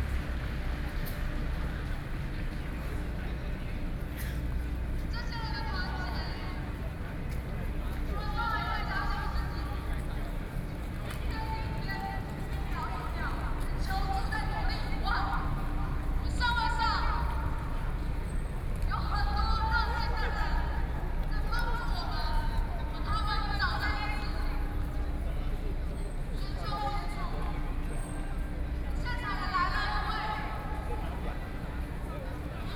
Xinyi Road - A noncommissioned officer's death
Protest against the government, A noncommissioned officer's death, Turned out to be a very busy road traffic, Sony PCM D50 + Soundman OKM II